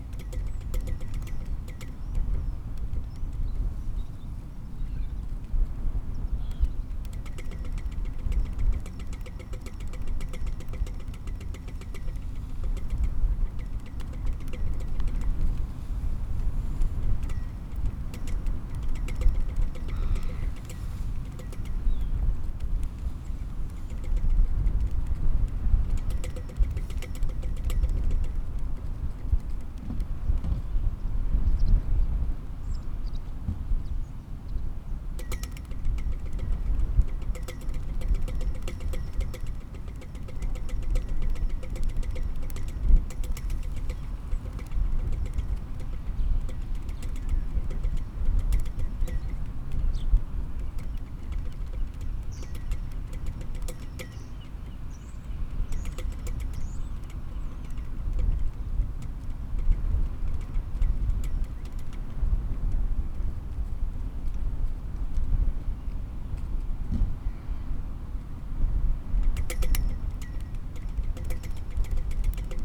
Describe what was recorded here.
Pinging flagpole ... St Bartholowmews church yard ... Newbiggin ... open lavaliers clipped to sandwich box ... background noise from blustery wind ... voices ...